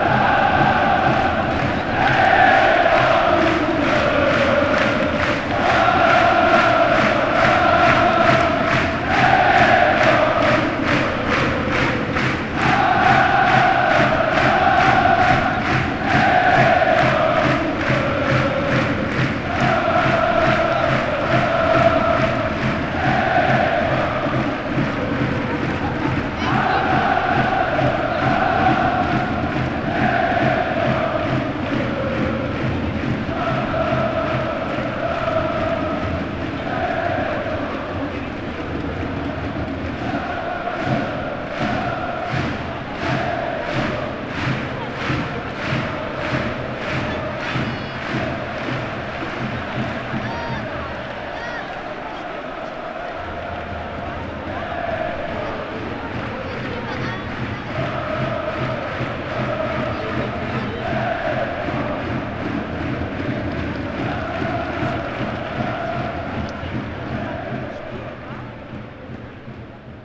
Bayer04 Leverkusen gegen Werder Bremen (1:0), Supporters, Fußballspiel, Fans singen
Leverkusen, Deutschland, 18 August 2011